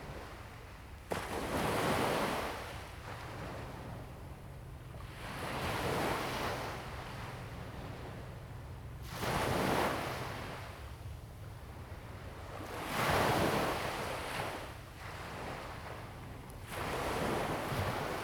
Sound of the waves
Zoom H2n MS+XY
福建省 (Fujian), Mainland - Taiwan Border